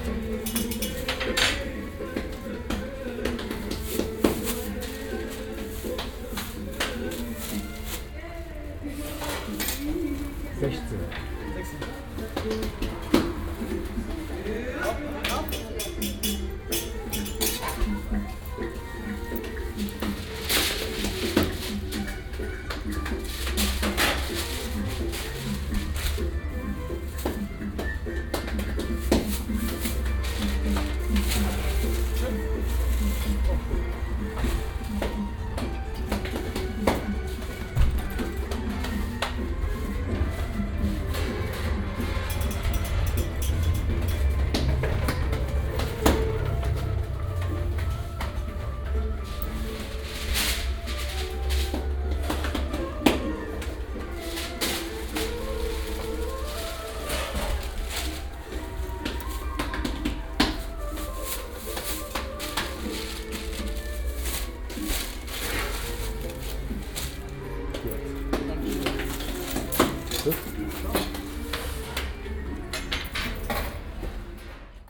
kottbusser damm, arab pide - 16 stück
19.03.2009 14:00, 16 pieces od arab pide bread
Berlin, Deutschland, 19 March, 14:00